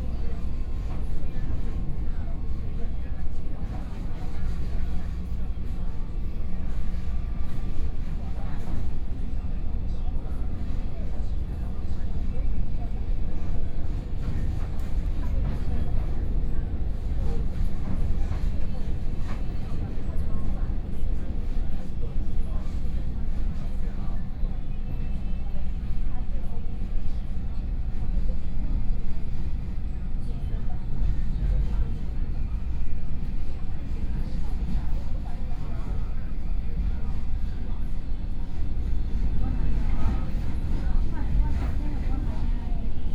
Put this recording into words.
Taichung Line, from Fengyuan Station to Taiyuan Station, Zoom H4n + Soundman OKM II